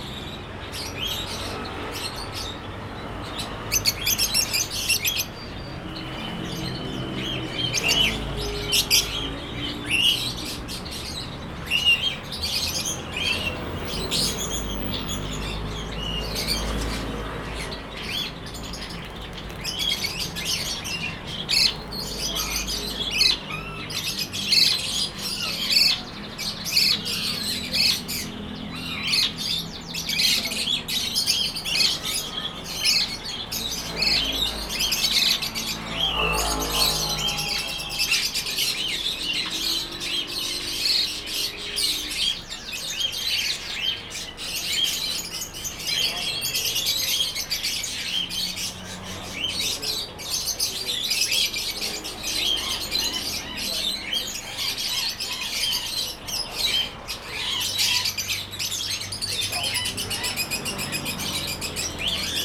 Sec., Bade Rd., Songshan Dist. - Bird Shop
Bird Shop, Traffic Sound
Zoom H2n MS + XY
23 September 2014, 12:50